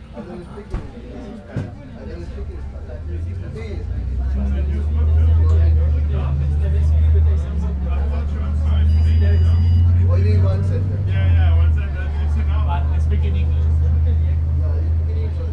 neoscenes: tourists on the late night 333 bus
Bondi Junction NSW, Australia, 21 November 2009, 12:59